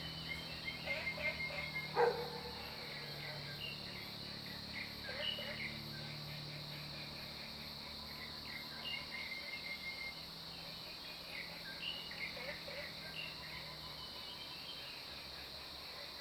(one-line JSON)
{"title": "綠屋民宿, 桃米里 Nantou County - In the morning", "date": "2015-06-10 05:28:00", "description": "Crowing sounds, Bird calls, Frogs chirping, Early morning\nZoom H2n MS+XY", "latitude": "23.94", "longitude": "120.92", "altitude": "495", "timezone": "Asia/Taipei"}